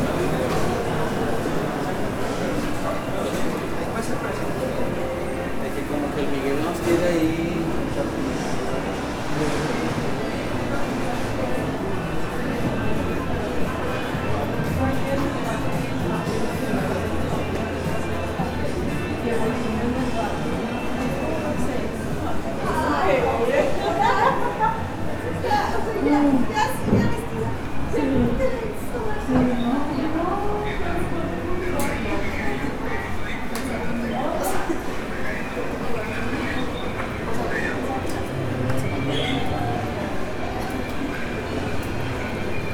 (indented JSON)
{
  "title": "Juan Nepomuceno, Villa del Juncal, León, Gto., Mexico - Caminando por el interior del centro comercial Plaza Mkdito.",
  "date": "2021-11-01 14:06:00",
  "description": "Walking inside the Plaza Mkdito shopping center.\nThere are several shops of all kinds and places to eat.\nI made this recording on November 1st, 2021, at 2:06 p.m.\nI used a Tascam DR-05X with its built-in microphones.\nOriginal Recording:\nType: Stereo\nCaminando por el interior del centro comercial Plaza Mkdito.\nHay varias tiendas de todo tipo y lugares para comer.\nEsta grabación la hice el 1 de noviembre de 2021 a las 14:06 horas.\nUsé un Tascam DR-05X con sus micrófonos incorporados.",
  "latitude": "21.15",
  "longitude": "-101.69",
  "altitude": "1822",
  "timezone": "America/Mexico_City"
}